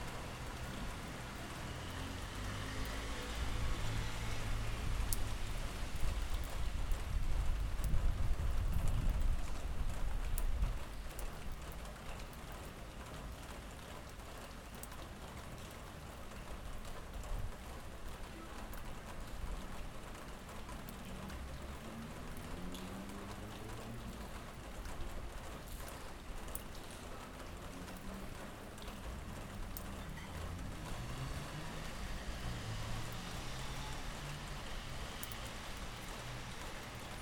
La Salud, Barcelona, Barcelona, España - Afternoon rain
Afternoon rain recorded from my bedrrom window.
22 September 2014, 17:00